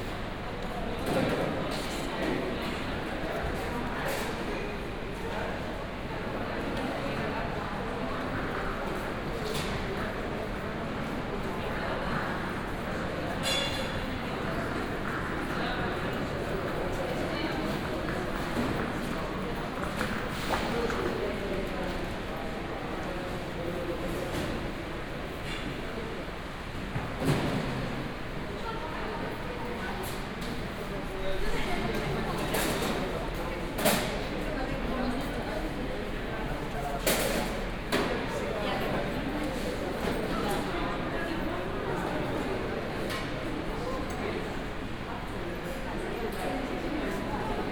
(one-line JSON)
{"title": "Airport Marrakesch-Menara - security zone", "date": "2014-03-01 10:55:00", "description": "security zone, before the checks\n(Sony D50, OKM2)", "latitude": "31.60", "longitude": "-8.03", "timezone": "Africa/Casablanca"}